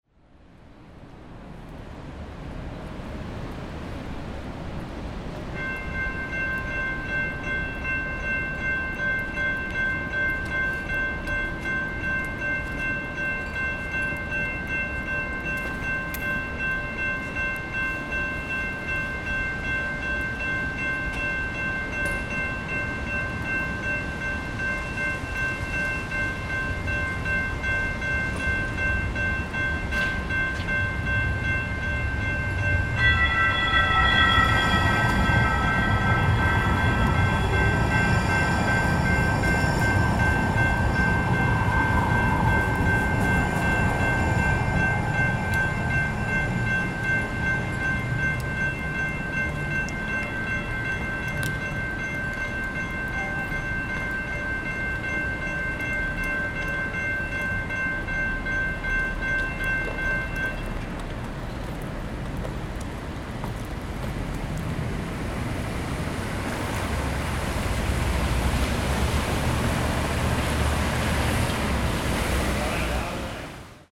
Charlotte NC - Wet Morning Light Rail Crossing, Charlotte NC
A wet morning, crossing bells and Lynx Light Rail train.